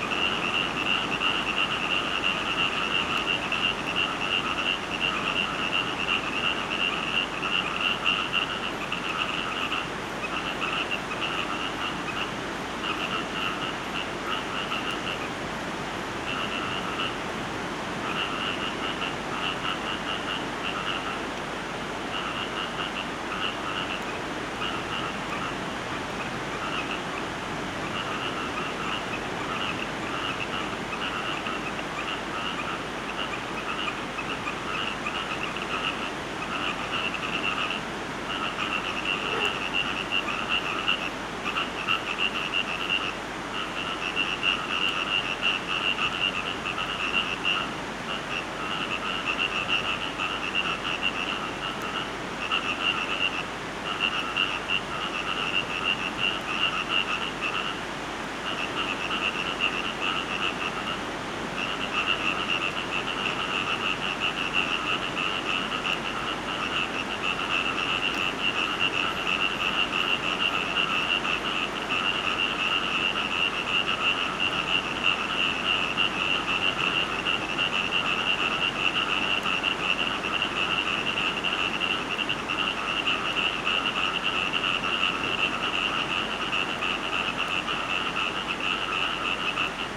Jalan Similajau National Park, Bintulu, Sarawak, Maleisië - frogs by the sea
frogs by the sea. At first i was really surprised: amphibians and salt water doesn't seem like a healthy combination. But then i found out that bjust behind the beach raainwater pools were formed in the undergrowth. So now you can enjoy in audio the combination of sea and frogs
Malaysia, December 2007